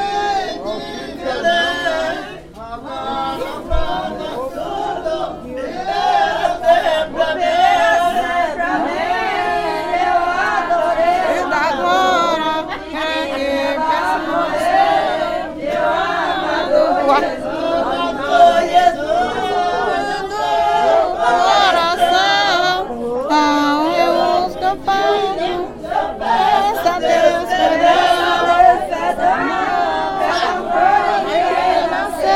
festa e reza do povo kalunga